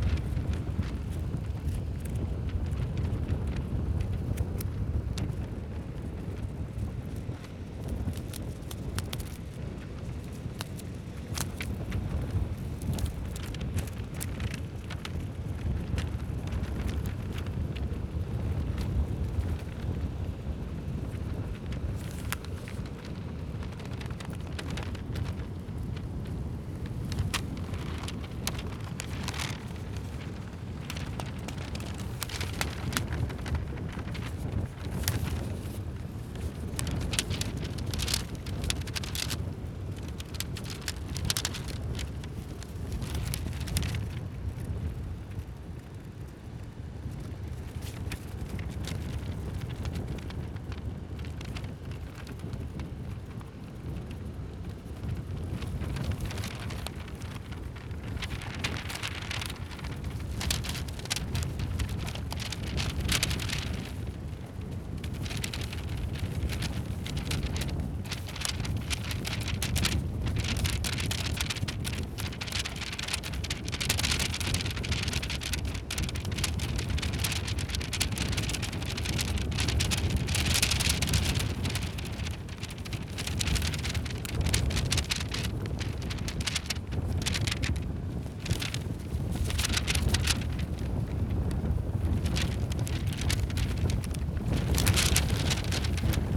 Sasino, at the beach - solo for a magazine
a short solo for a magazine fluttering in the wind. manipulating the position, grip as well as folds of the pages in order to obtain various flapping sounds.